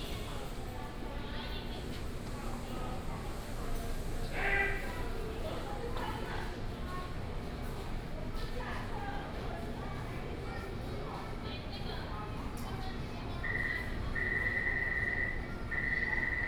Xinyi Anhe Station, Da’an Dist., Taipei City - In MRT station platform
In MRT station platform